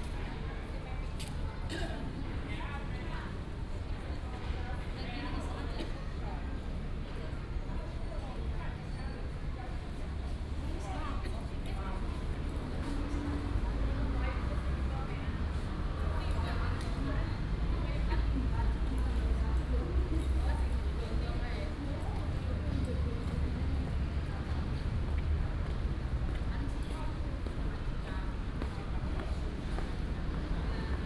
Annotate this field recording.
in the Park, The elderly and children, Traffic Sound